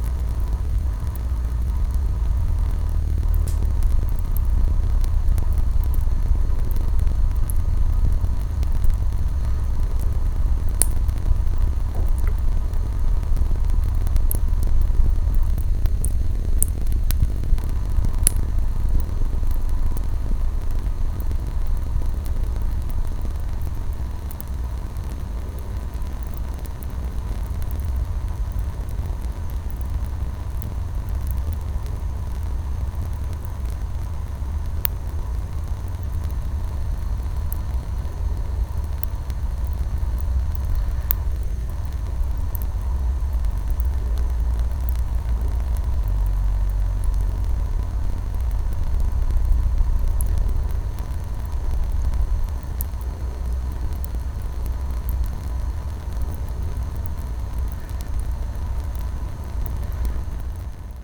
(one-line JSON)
{"title": "Poznan, Mateckiego Street, bathroom - water static", "date": "2012-10-27 21:10:00", "description": "a weak flux of water hitting the sink. mics placed millimeters from the hitting point. water continuously hitting the surface of the sink creates beautiful, intricate static sounds.", "latitude": "52.46", "longitude": "16.90", "altitude": "97", "timezone": "Europe/Warsaw"}